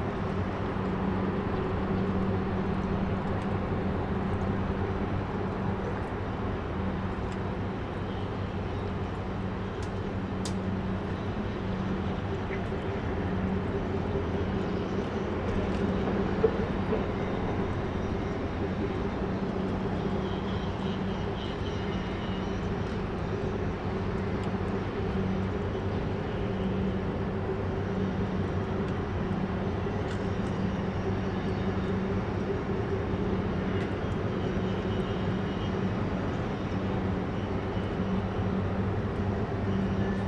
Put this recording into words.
construction noise from the opposite side of the building